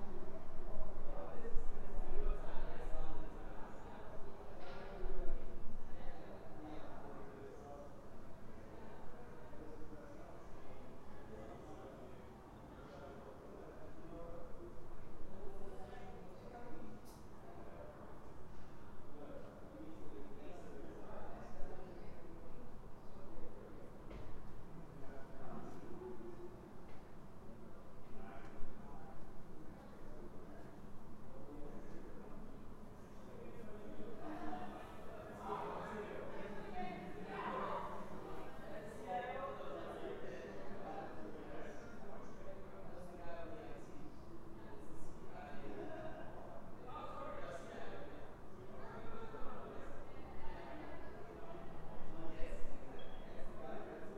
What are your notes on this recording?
People talking in the backyard, sign of a pleasant summer.